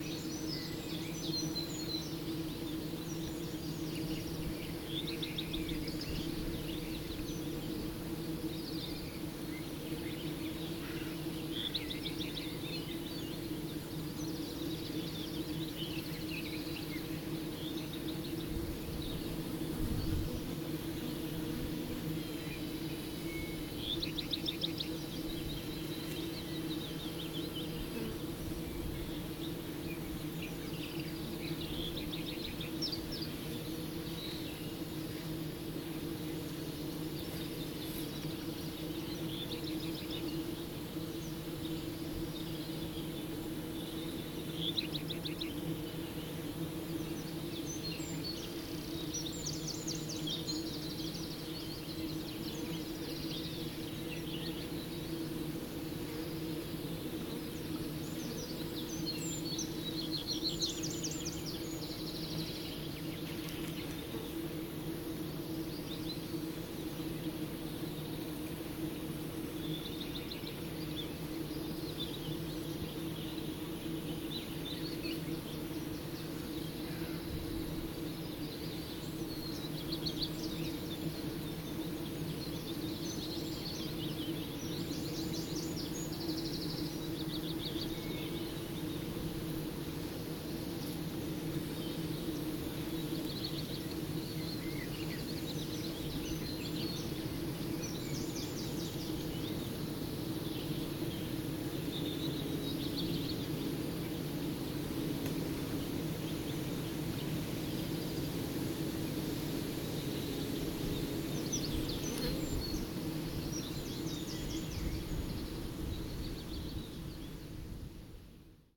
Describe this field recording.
Bees collecting pollen in Sycamore. Zoom H2N